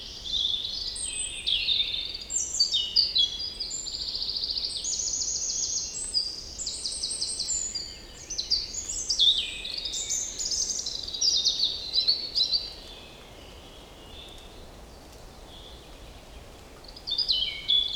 Birds singing in the forest.
Recorded with Sounddevices MixPre3 II and LOM Uši Pro.